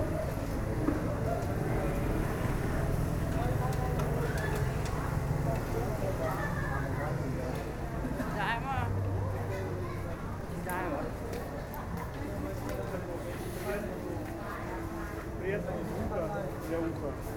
Krala Haom Kong, St, Phnom Penh, Cambodia - Street No. 118
At an intersection of street No. 118 with another street in a neighborhood in Phnom Penh, after a day of wandering (collecting images and recordings), we sit in creaky wicker chairs on the veranda of a corner cafe. The sun is going down; a white-robed monk comes in and passes the shopkeeper a slip of white paper, and then shuffles out.
8 October